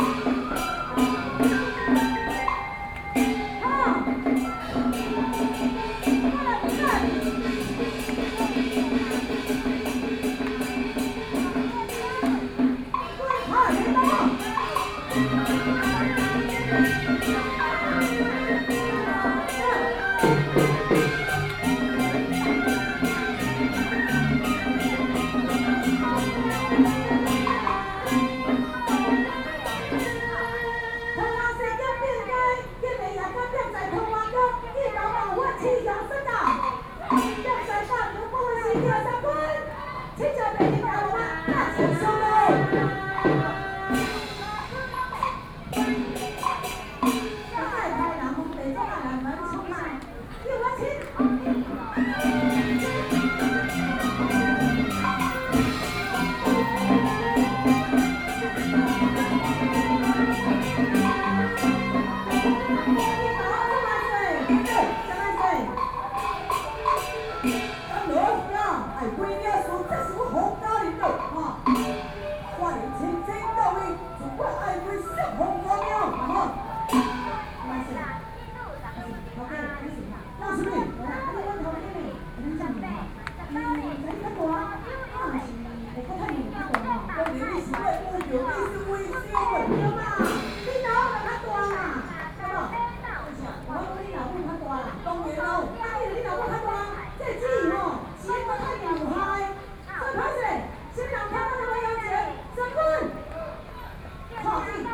in the Temple Square, Taiwanese Opera, Binaural recordings, Sony PCM D50 + Soundman OKM II
New Taipei City, Taiwan